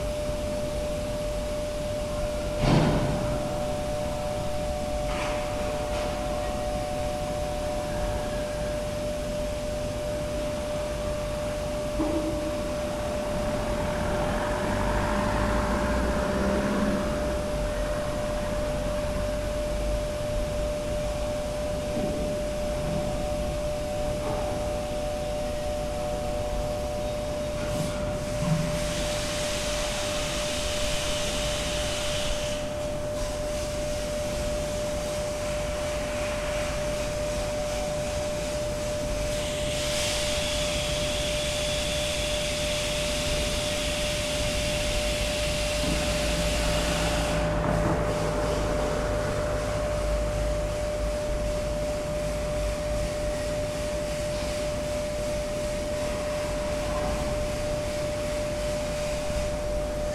one minute for this corner: Ulica heroja Saranoviča and Kraljeviča Marka ulica
Maribor, Slovenia, 20 August